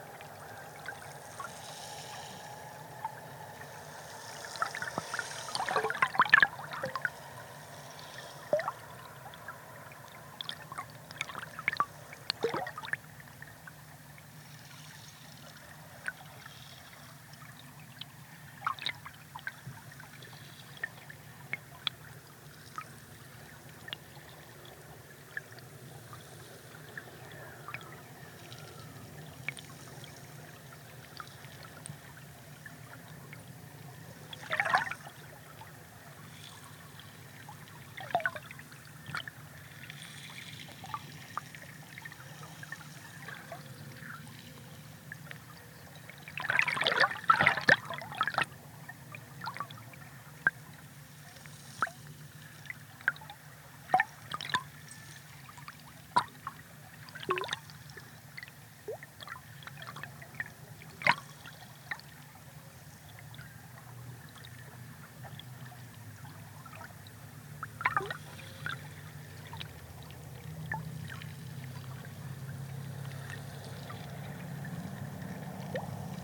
Riverside Walk Gardens, Pimlico - Millbank - Hydrophone at High Tide - MillBank, Pimlico
Recorded using Hydrophone, high-tide.
London, UK, January 2016